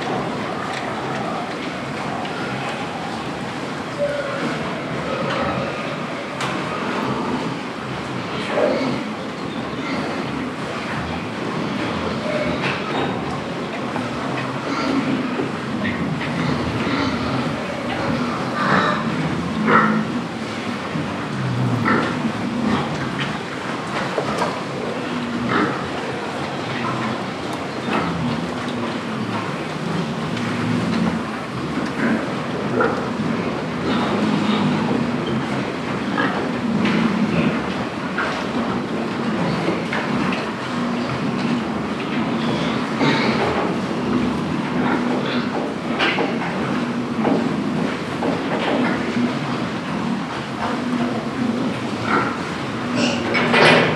SBG, El Vilar - Granja de cerdos